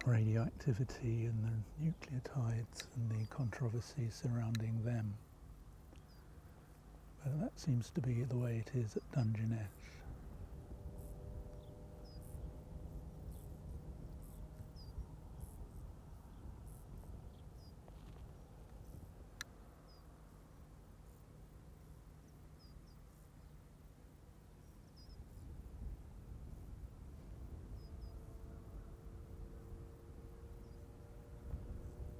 I was interested to measure the radioactivity in the vicinity of the nuclear power station. It turned out that the Geiger counter gave a lower reading (12 cnts/min) here than at home in London or Berlin (20cnts/min). At night the power station is lit like a huge illuminated ship in the darkness. This light has had impacts on the local wildlife.
England, United Kingdom, 24 July 2021, ~17:00